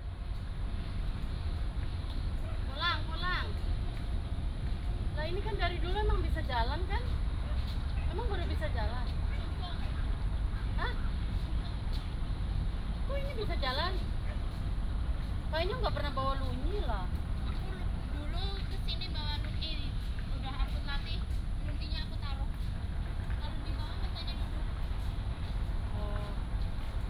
大安森林公園, Taipei City - Humming
in the Park, Bird calls, Foreign care workers are humming